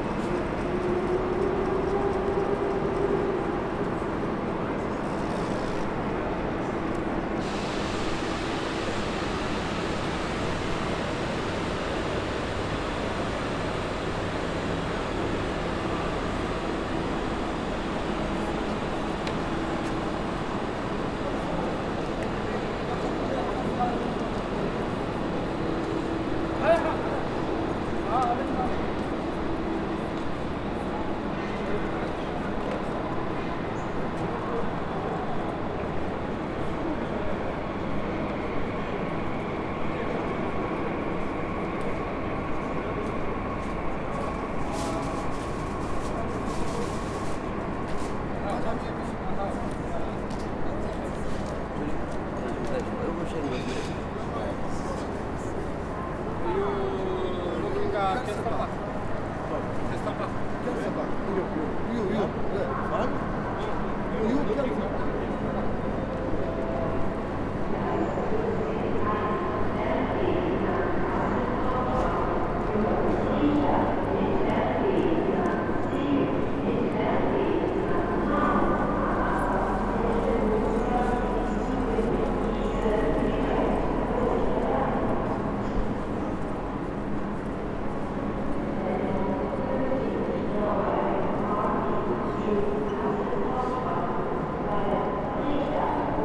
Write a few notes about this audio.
This recording starts at platform 18, where the international trains use to leave, but not half past three. Voices passes by, another beggar is asking for money, different voices are audible in different languages.